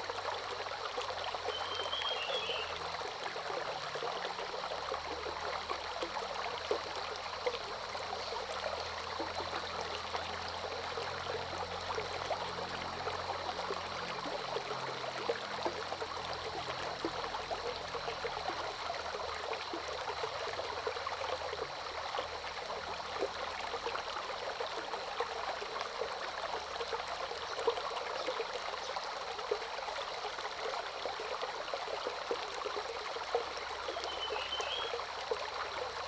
Early morning, Bird calls, Brook
Zoom H2n MS+XY
Nantou County, Taiwan, 11 June 2015